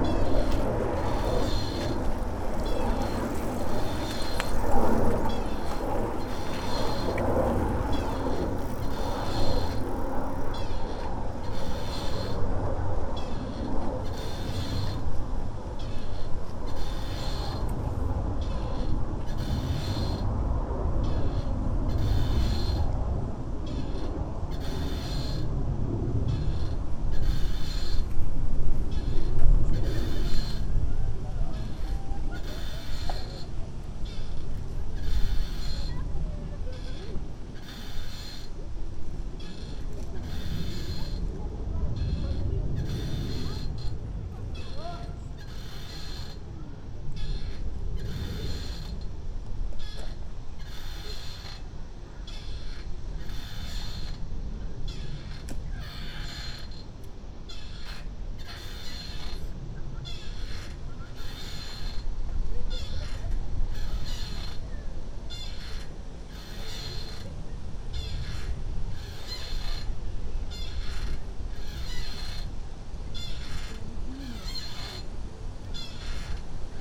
{"title": "Ogrodowa, Lusowo, Polska - swing at the lake", "date": "2020-08-29 14:27:00", "description": "woman relaxing with her child on a big swing, planes taking off from a nearby, airport, a few people playing in the water, bikers passing by (rolnad r-07)", "latitude": "52.43", "longitude": "16.69", "altitude": "81", "timezone": "Europe/Warsaw"}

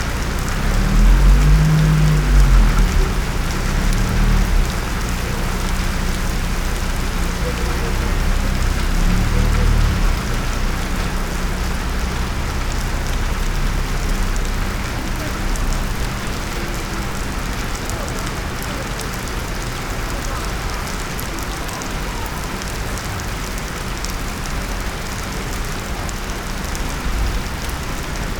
{"title": "Secret listening to Eurydice, Celje, Slovenia - after reading poems rain ...", "date": "2014-06-13 18:04:00", "latitude": "46.23", "longitude": "15.26", "altitude": "243", "timezone": "Europe/Ljubljana"}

